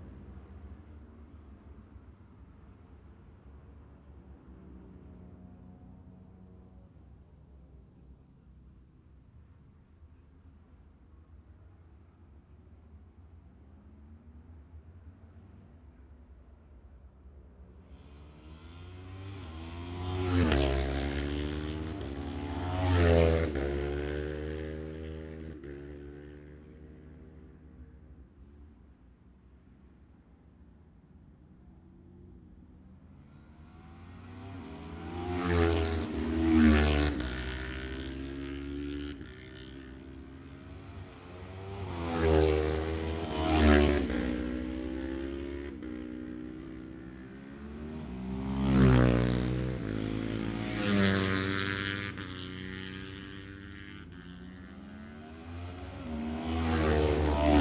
Jacksons Ln, Scarborough, UK - Gold Cup 2020 ...
Gold Cup 2020 ... Twins qualifying ... Memorial Out... dpa 4060s to Zoom H5 ...
11 September 2020